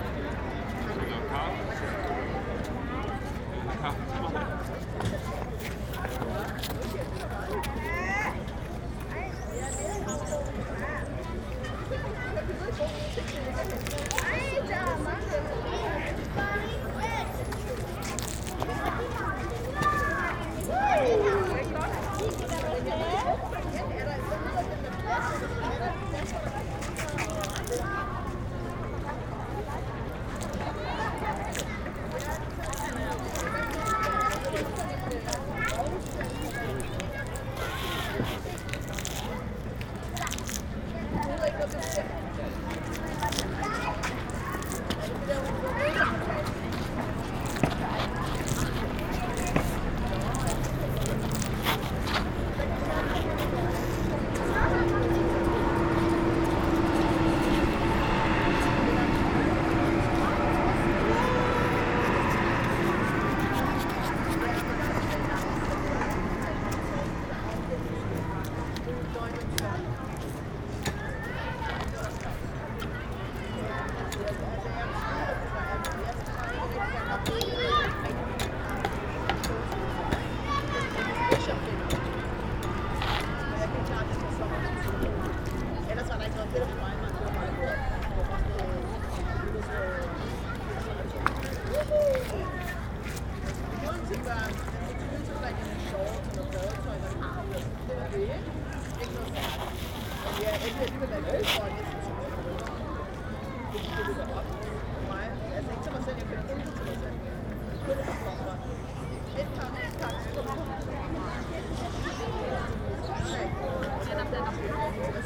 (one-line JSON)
{"title": "Hamburg, Deutschland - Children playing in the park", "date": "2019-04-19 12:00:00", "description": "Grasbrookpark at 12AM. Children playing in a park, with the parents.", "latitude": "53.54", "longitude": "10.00", "altitude": "7", "timezone": "GMT+1"}